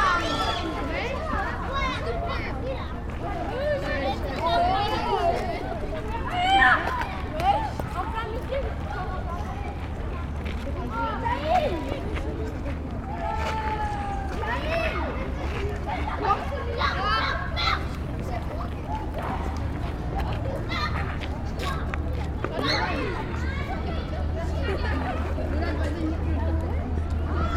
Place du Trocadéro, Paris, France - (360) Children playing and screaming
Recording from a bench in the park - contains mostly children's screams during playtime.
ORTF recording made with Sony D-100